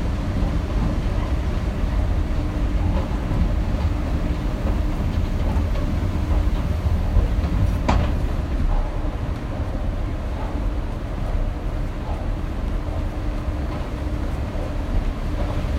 Hodžovo nám., Bratislava-Staré Mesto, Slowakei - empty escalators
empty escalators in the underground of the Hodzovo namestie, Bratislava
Bratislavský kraj, Slovensko, 1 April 2020